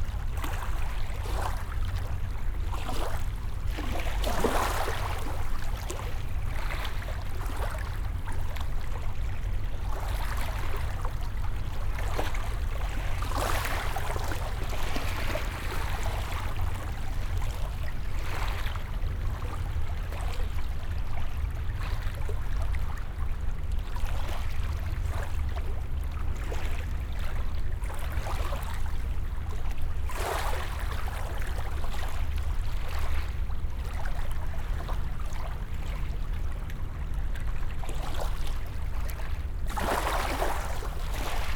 Unnamed Road, Croton-On-Hudson, NY, USA - Hudson Croton Point
The Hudson hits the beach at Croton Point in gentle waves. The resonant vibration, hum, and pulses of the trains and machines' big diesel engines along the shore are ever-present.
This recording was taken during artistic research together with Bruce Odland (O+A).
New York, United States, November 2019